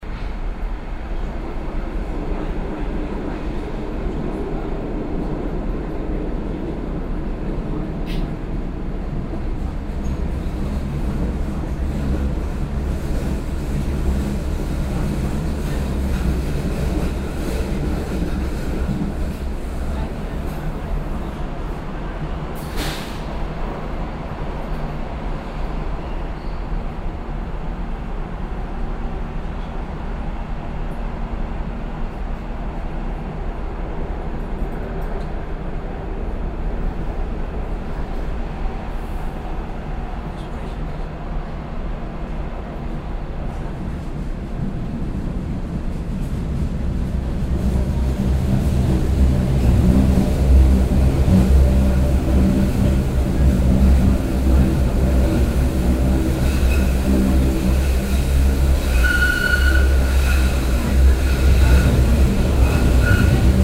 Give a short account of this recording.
soundmap: köln/ nrw, U Bahnfahrt Linie 18 abends, nächste Haltestelle Dom/ HBF, project: social ambiences/ listen to the people - in & outdoor nearfield recordings